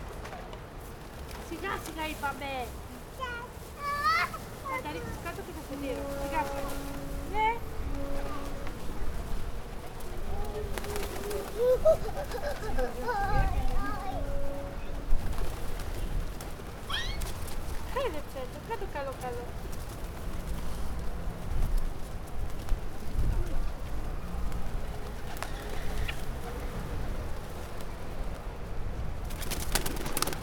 Heraklion, Downtown, Ekteleseos Martiron square - piegons/tourists
a few tourists and locals were siting on a low wall, waiting for their kids to get tired chasing a numerous flock of pigeons. the birds moving around, flapping their wings, city ambience